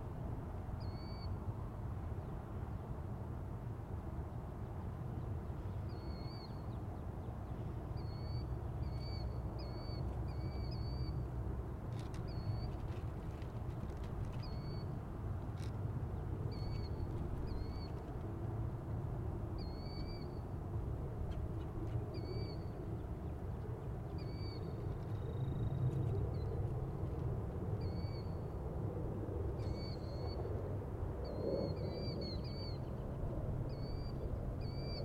McCausland Ave, St. Louis, Missouri, USA - Old Route 66
On bank of River Des Peres Channel near Old Route 66
Missouri, United States